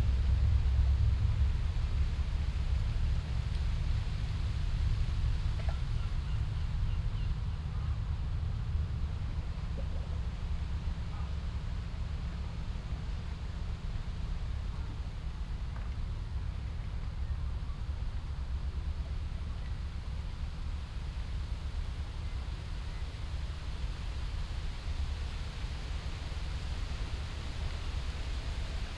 Kiel Canal Exit, Kiel, Deutschland - Kiel Canal Exit
Exit of the Kiel Canal in Kiel, a passing ship, wind, rustling leaves, small splashing waves, constant low frequency rumble from ship engines, a ship horn (@4:40), gulls, geese and some oystercatcher (@13:10) Binaural recording, Zoom F4 recorder, Soundman OKM II Klassik microphone with wind protection
Kiel, Germany, 24 May